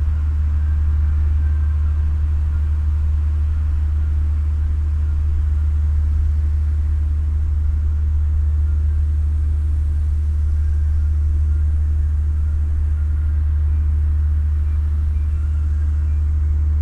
the heavy drone of a passenger ship 100m away is still very present, and mixes with traffic sounds from the nearby Severins-bridge. a radio is playing somewhere on the boat in front of me.
(Sony PCM D50, DPA4060)